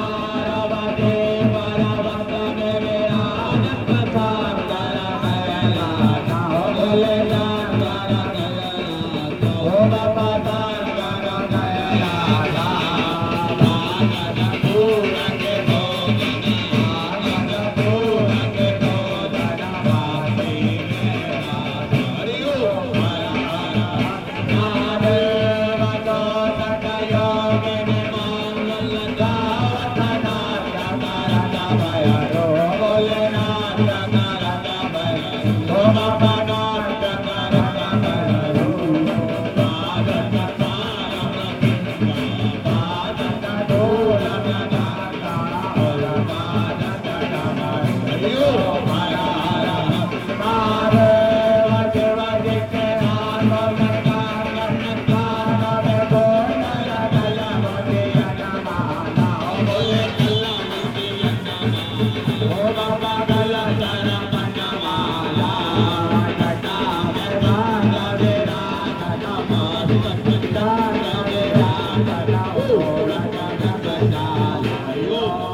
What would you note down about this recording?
During the festival of Durga, Hindus gather every evening to celebrate the Goddess.